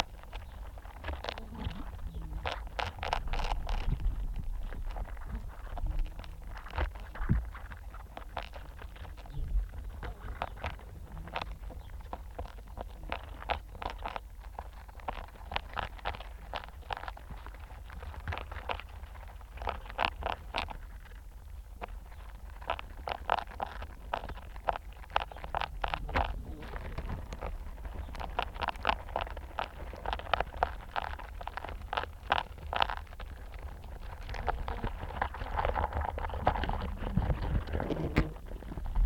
Forest Garden, UK - apple orchard
wasps and flies on the fallen apples
England, United Kingdom, 19 July 2022